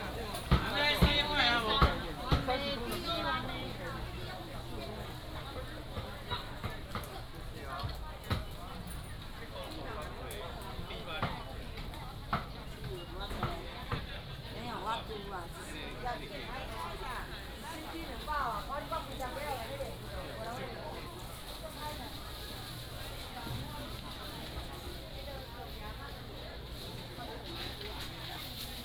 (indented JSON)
{
  "title": "斗六西市場, Yunlin County - Walking in the market",
  "date": "2017-01-25 10:38:00",
  "description": "Walking in the market",
  "latitude": "23.71",
  "longitude": "120.54",
  "altitude": "57",
  "timezone": "GMT+1"
}